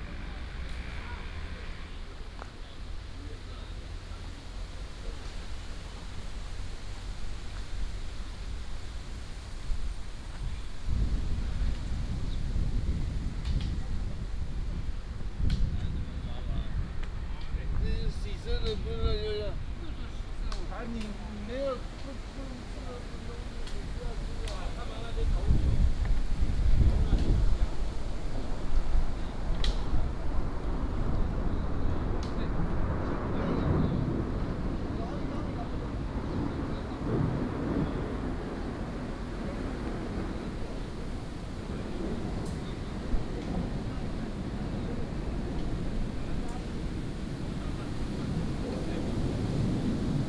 Nanya Park, North District, Hsinchu City - in the Park
A group of old people playing chess, wind, fighter, traffic sound, birds sound, Binaural recordings, Sony PCM D100+ Soundman OKM II
North District, Hsinchu City, Taiwan